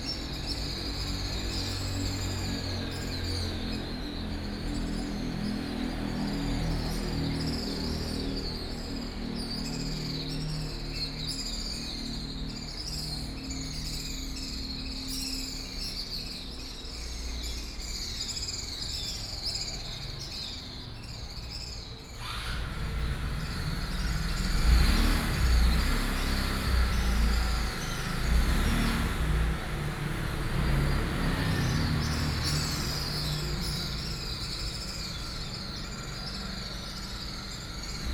土地銀行台南分行, West Central Dist., Tainan City - Swallow
Early morning street, Swallow, Bird sound, Traffic sound
臺南市, Taiwan, 5 October 2019